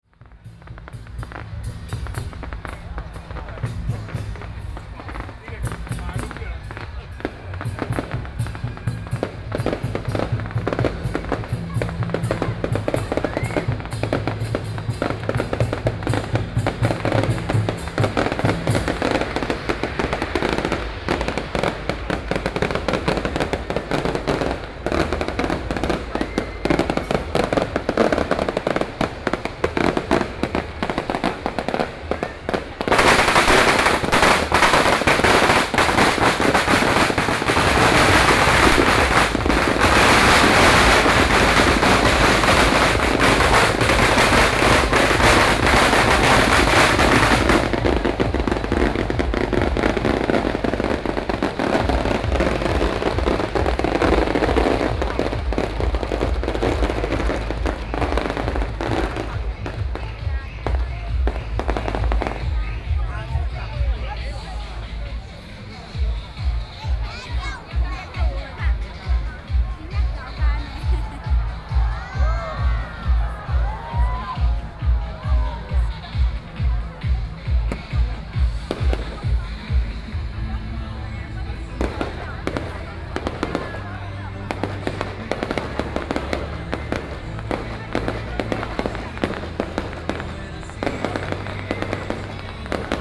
內湖區港富里, Taipei City - firecrackers
Traditional Festivals, The sound of firecrackers
Please turn up the volume a little. Binaural recordings, Sony PCM D100+ Soundman OKM II